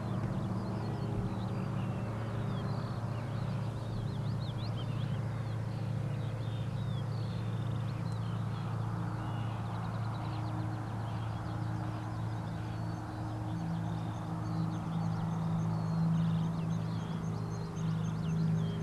Pause Cellos
A circle of 24 wood and steel cello bodies and drums with extra long necks. each has one string.Distant cattle grid and Cumbrian sheep.